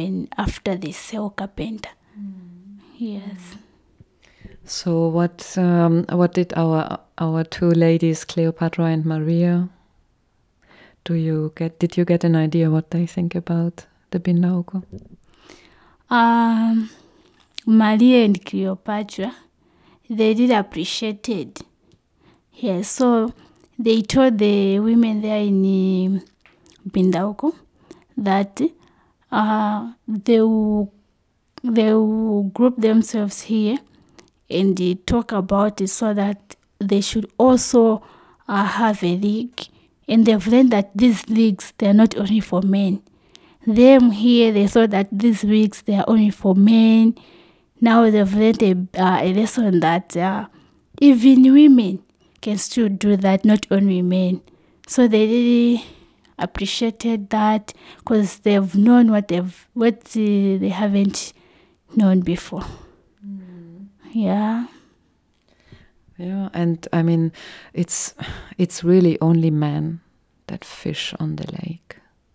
Lake View, Sinazongwe, Zambia - Theres a big difference for the women across the lake...
The interview with Nosiku Mundia was recorded shortly after her return from Binga where she accompanied Maria Ntandiyana and Cleopatra Nchite, two representatives of Sinazongwe women clubs on a visit to the women’s organisation Zubo Trust. Nosiku is still excited. As for the other two women, it was her very first international journey into unknown territory... in the interview, Nosiko reflects on her role as the record-keeper, the one who documents the event in service for the others to assist memory and for those back home so even they may learn by listening to the recordings. Here i ask her about any differences in the lives of women she may have noticed...
the entire interview with Nosiku is archived here: